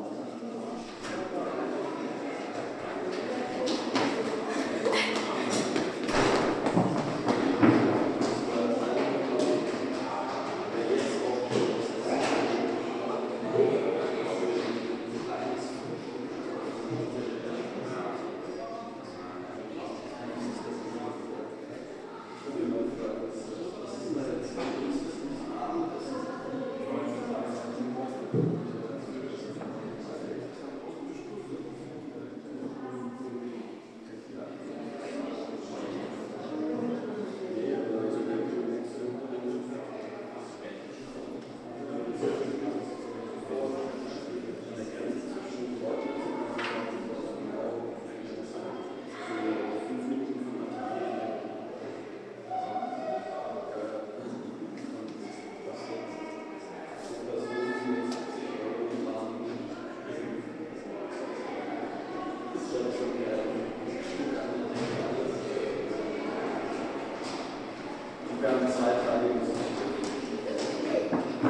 Gostenhof, Nuremberg, Germany - nachbarschaftshaus, neighbourhood's center
children running, free radio activists meeting, musicians preparing a concert, a clerk shutting a door; spielende kinder, freies radio treffen, musiker beim einspielen vor einem konzert im nachbarschaftshaus gostenhof